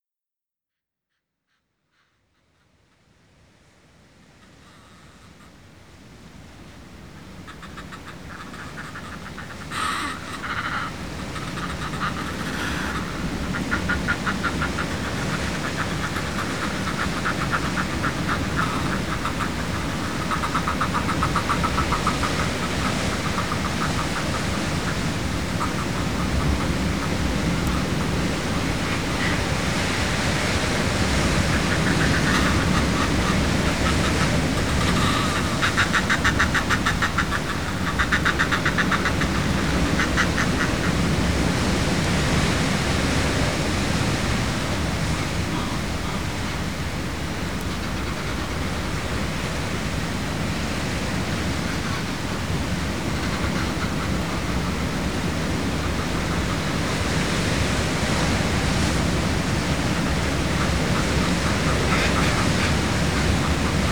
Dunnet Head, Highlands - Cliffside bird colony
I'm no ornithologist, so I couldn't tell you what kind of birds these are but they looked not unlike gulls and there were hundreds of them - and others - along the cliffs here at Dunnet Head. I climbed down the cliff as far as I felt comfortable to get closer but quickly got too scared to try to descend any more.